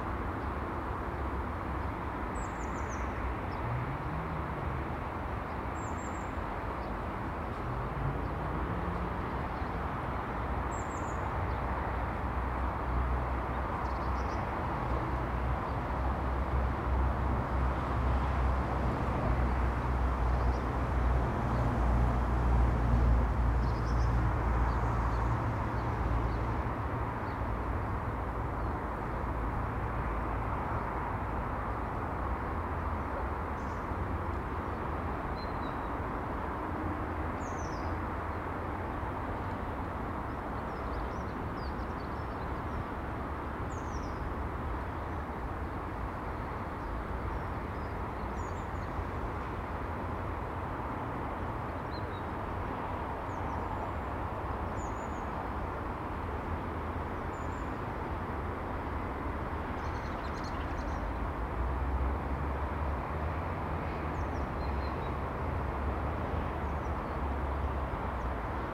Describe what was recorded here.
The Drive High Street Moorfield, In the warm wind, the snow is melting fast, A sense of release, from the cold, a first glimpse of spring